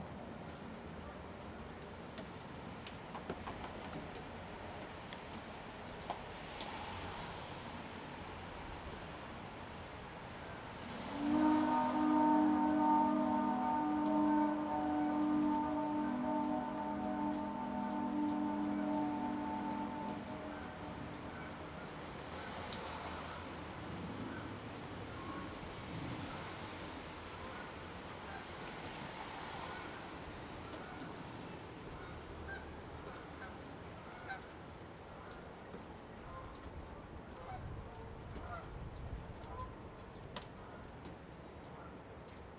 My Home - Whistle heard from 280 8th Avenue East, Owen Sound, Ontario
With Canada geese flying overhead on a rainy evening, the whistle is a welcomed visitor to the soundscape.
ON, Canada, 19 November 2016